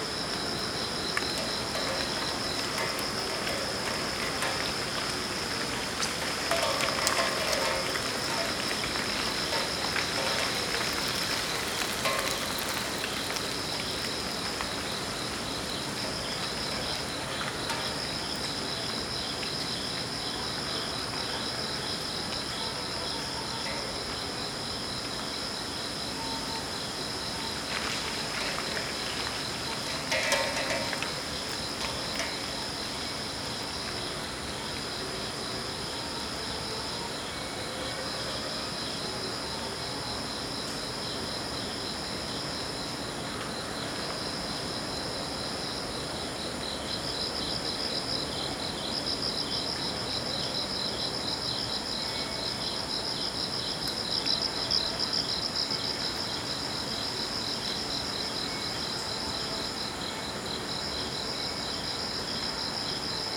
TNUA, 29 July.7pm
record at, 29 July, 2008.7pm.
Taipei National University of the Arts
Taipei City, Taiwan